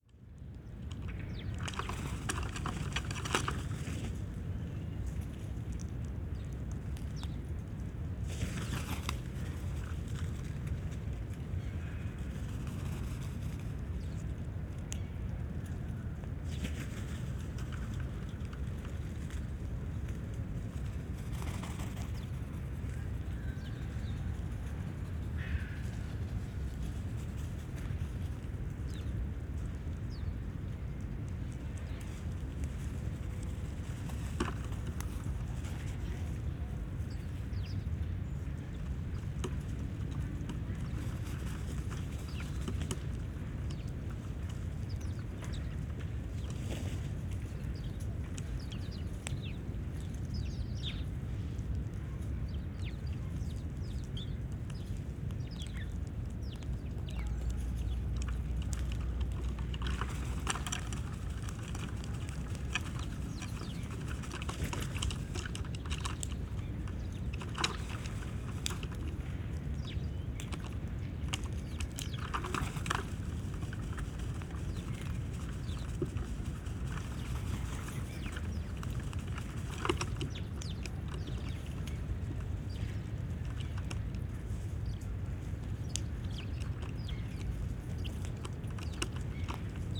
Berlin Tempelhof Birds - dun crows
dun crows have taken over, picking sunflowerseed
Berlin, Germany, 13 November